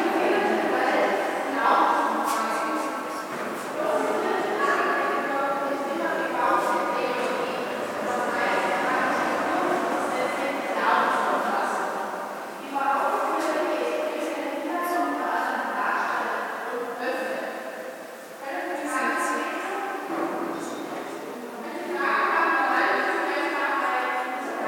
tondatei.de: klosterkirche roggenburg
klosterkirche, glocken, gebimmel
Roggenburg, Germany, 11 September 2010